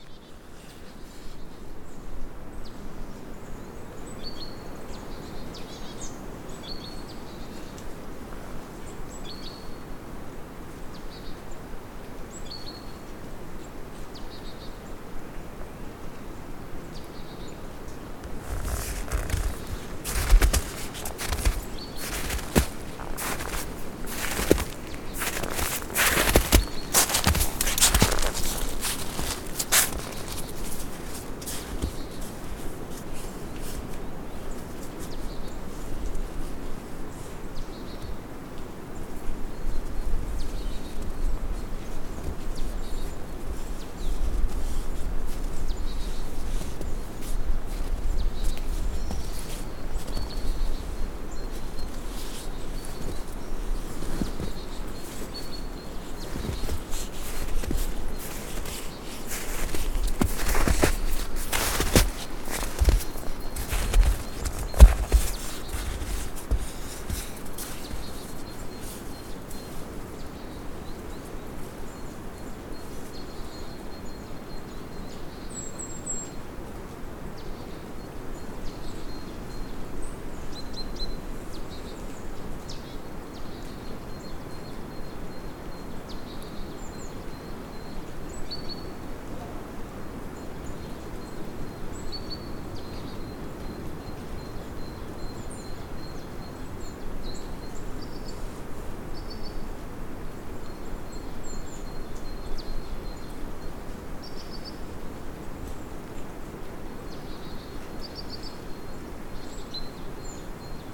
Mukinje, Plitvička Jezera, Croatia - I walk through the forest, footsteps in the snow, birds singing
I walk through the forest, footsteps in the snow, birds singing
23 January 2021, 16:34